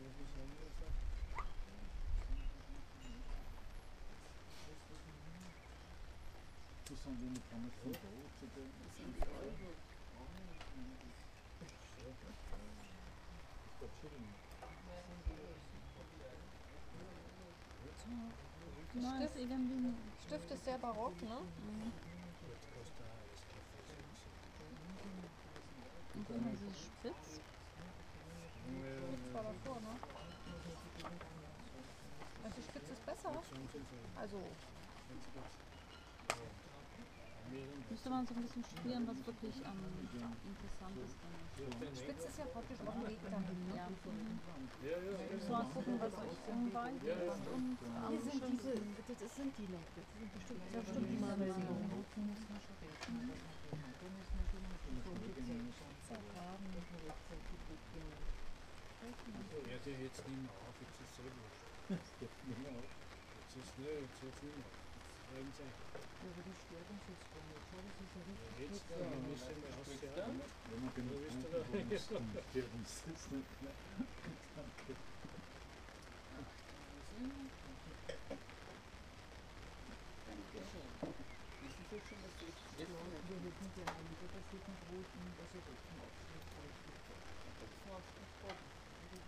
Dürnstein, Österreich - Alter Klosterkeller
at an inn, shortly before dinner's to arrive. I love the choir pieces made by human voices talking to each other leisurely.